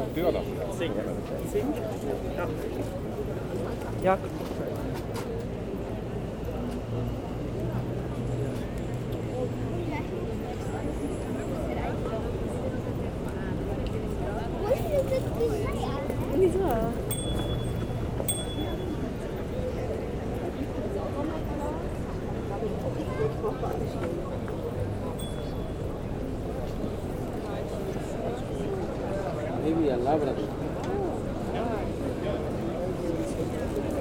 Maastricht, Pays-Bas - Commercial street
A walk in Maastricht. People walking quietly in the very commercial street of Maastricht. Bells ringing on Markt.
20 October 2018, 15:00